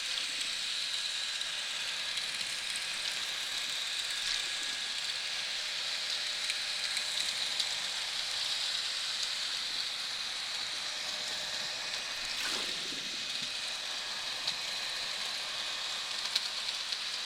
Hydrophone noise pollution at Karaköy, Istanbul
noisy underwater environments because of boat traffic